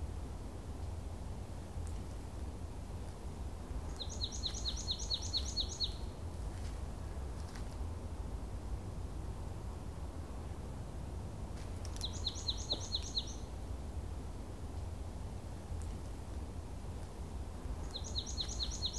Minstead, UK - 044 Glorious Day